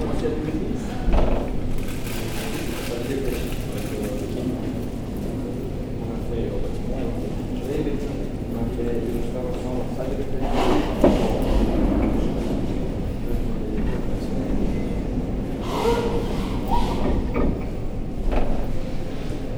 Ottignies-Louvain-la-Neuve, Belgium - Ottignies station
The Ottignies station on a saturday morning. Security guards discussing very quietly near the door, a woman lets the phone fall on the ground. Walking in the tunnel and on the platform. Train to Brussels arrives, I embark. Quiet discussions in the train, a person on the phone with a strong accent.